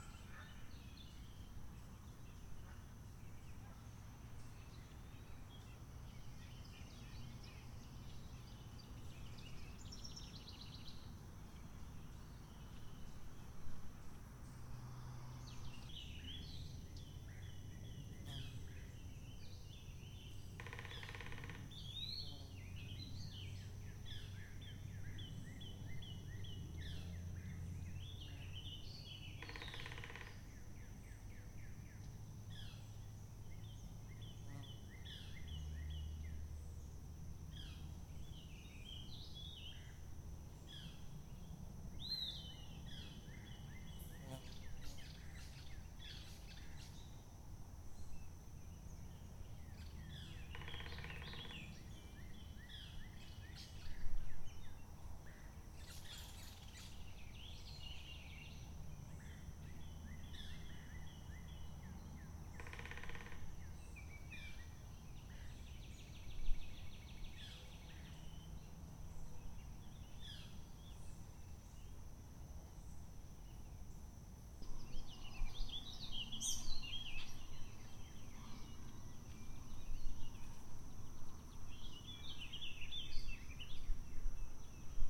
Trail, Ouabache State Park, Bluffton, IN, USA - Sounds heard on a 15 minute walk on Trail 3, early morning, Ouabache State Park, Bluffton, IN 46714, USA
Sounds heard on a 15 minute walk on Trail 3, early morning, Ouabache State Park, Bluffton, IN. Recorded at an Arts in the Parks Soundscape workshop at Ouabache State Park, Bluffton, IN. Sponsored by the Indiana Arts Commission and the Indiana Department of Natural Resources.